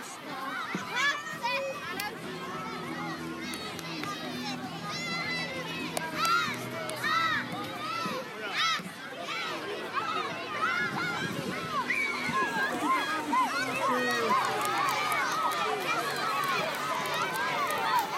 Tahtimarssi P, Oulu, Finland - Lapset, school soccer game party
soccer game in Hiukkavaara school, teachers against children, children screaming: Lapset, clap = children, clap, recording in collaboration with Hiukavaaran koulu